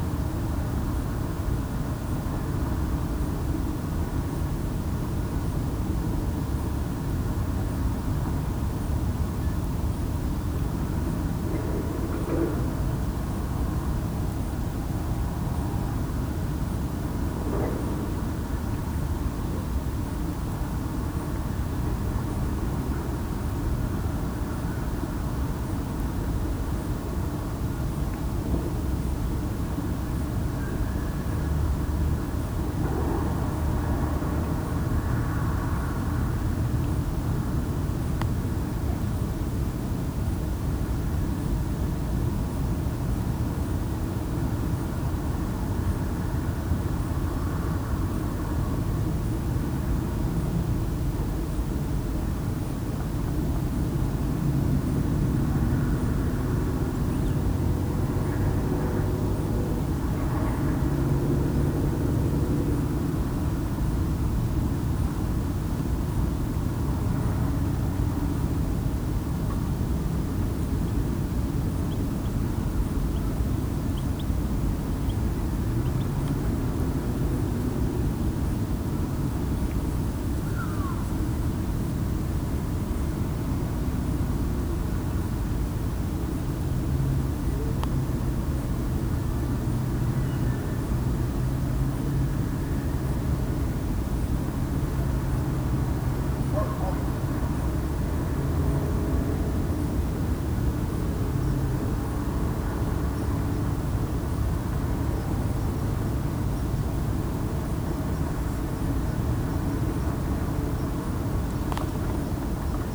A quiet June afternoon rest stop on the walking track on Urumau reserve. The sounds of the port town rise up, dogs barking, a whooping child, a screech of a tyre.